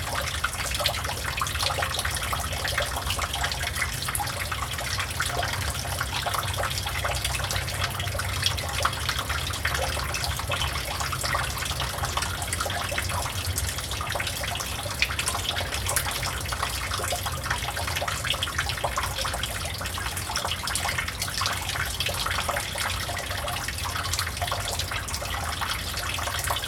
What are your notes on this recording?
water, noise, water droplet, water droplet falling from the roof